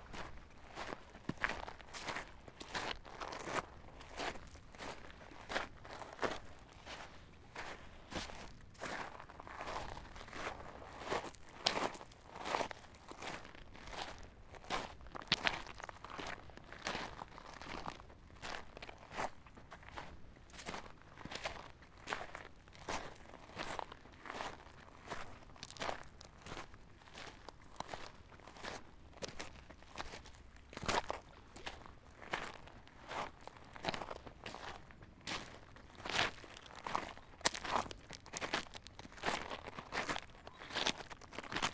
alt reddevitz: strand - the city, the country & me: soundwalk at the beach, part 2
the city, the country & me: october 3, 2010
Middelhagen, Germany